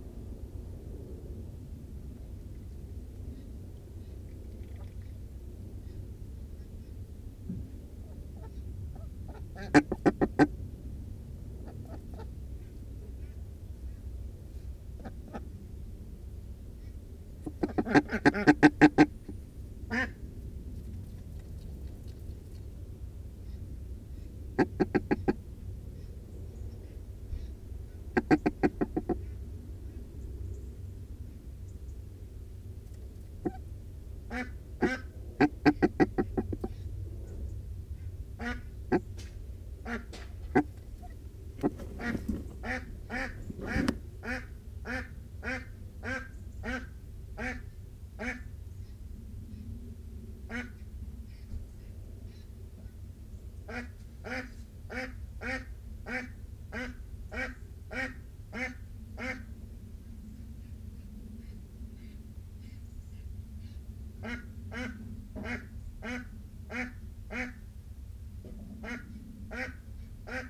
Honey has become extremely broody and we have had to pop some fake eggs into the duck house to encourage her to lay in there rather than in her secret nests all over the garden from which it is much harder to retrieve the actual, edible eggs. So there are fake eggs in the duck house, and then she and Pretzel usually lay 2 in there overnight. Come morning, Honey can be found clucking over the "clutch" very protectively, so I decided to record her inside the duck house. I have left in the bit of handling noise as when I approached to put my recorder gently in the corner, she made an amazing warning noise - very huffy and puffy - which I have never heard before. If I cut out the handling noise, the intrusion on her space and subsequent protective warning sound would be lost, and I think they are brilliant little sonic insights into duck behaviour.